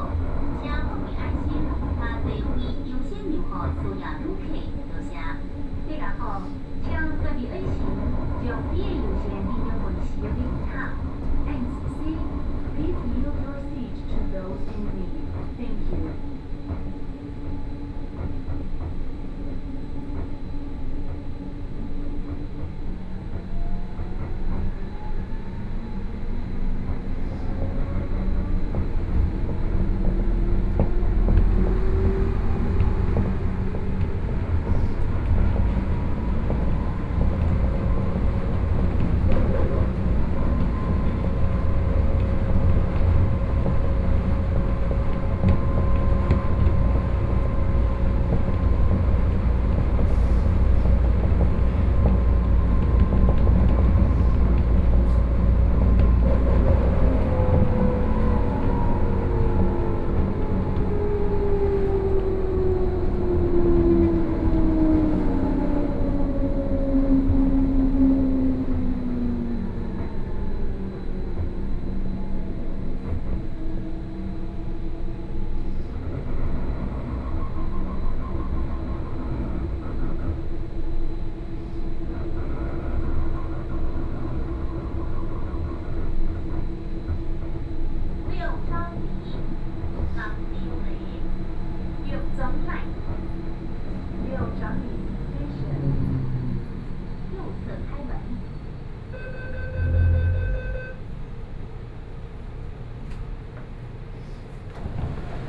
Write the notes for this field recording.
from Zhongxiao Fuxing Station to Liuzhangli Station, Sony PCM D50 + Soundman OKM II